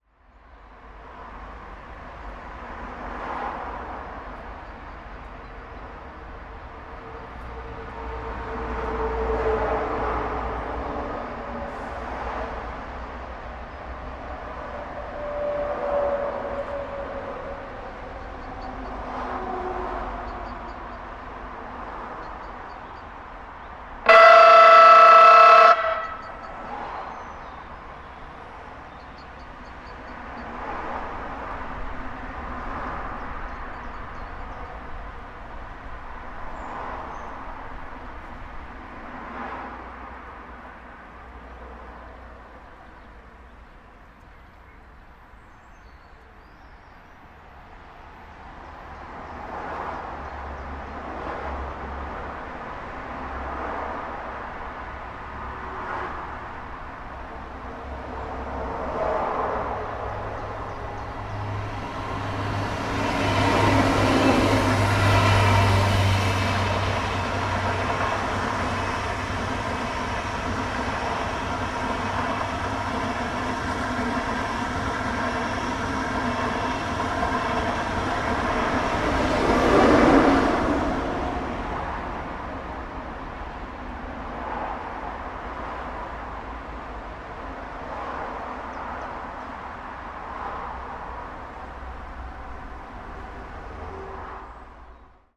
under the pedestrian bridge, Muggenhof/Nuremberg
highway and train sounds reflected off the bottom of the bridge